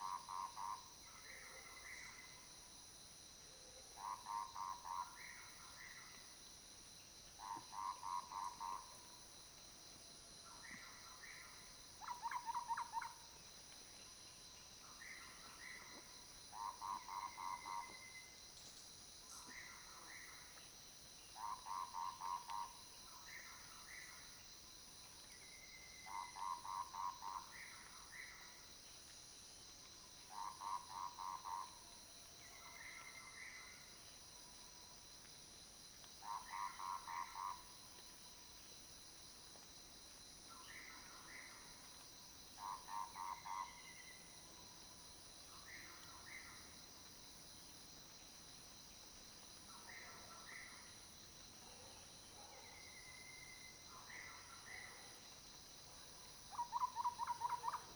Lane 水上, 桃米里, Puli Township - Birdsong
early morning, Faced with bamboo, Birdsong
Zoom H2n Spatial audio
Puli Township, 水上巷